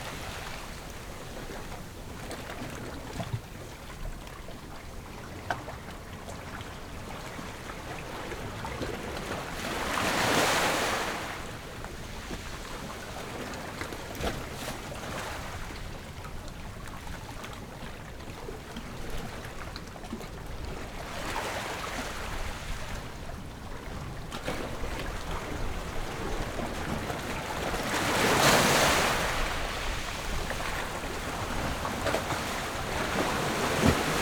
Jizanmilek, Koto island - Sound of the waves
Sound of the waves
Zoom H6 +Rode NT4